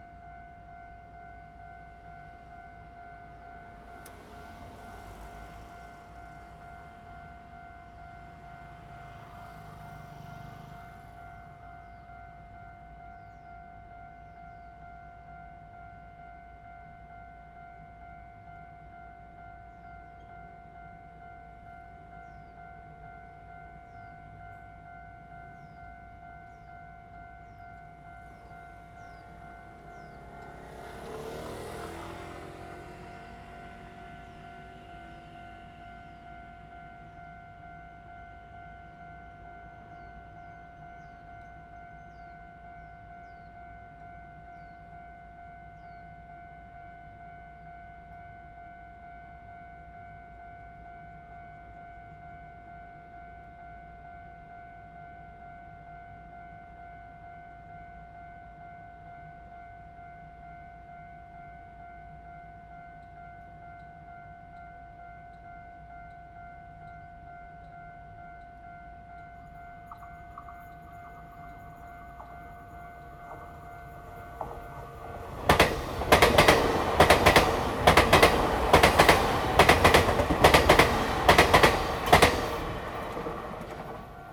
中華路一段, Zhongli Dist., Taoyuan City - Railroad Crossing
The train runs through, traffic sound
Zoom h2n MS+XY